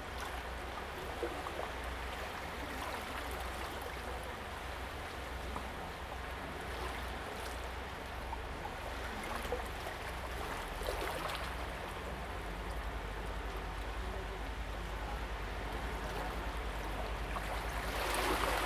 杉福村, Hsiao Liouciou Island - Waves and tides
Waves and tides, below the big rock
Zoom H2n MS +XY